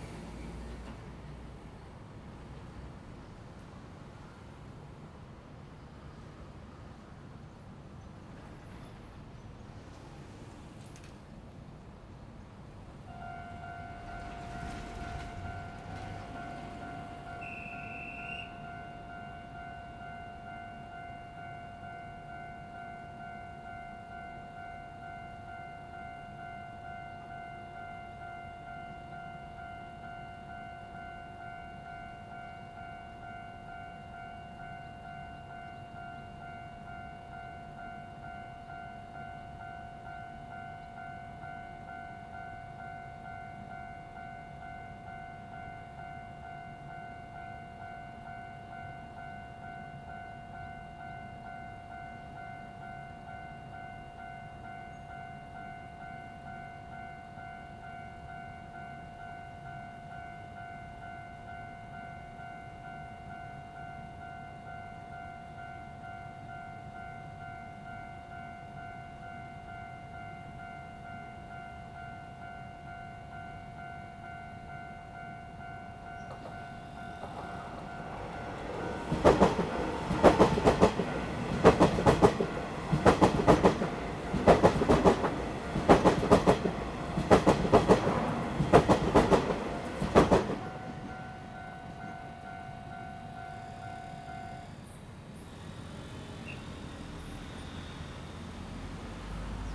Jianguo E. Rd., Taoyuan Dist. - train runs through
Next to the railroad track, Traffic sound, The train runs through, Zoom H2n Spatial
Guishan District, Taoyuan City, Taiwan, July 2017